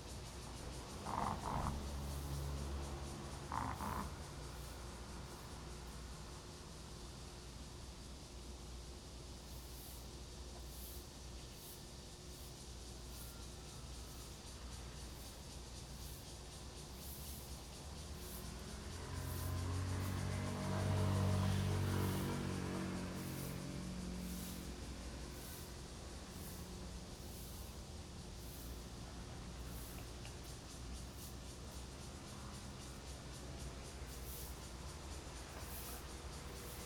Xinguang Rd., Pingzhen Dist. - The train runs through
Next to the tracks, Cicada cry, traffic sound, The train runs through, The microphone is placed in the grass
Zoom H2n MS+ XY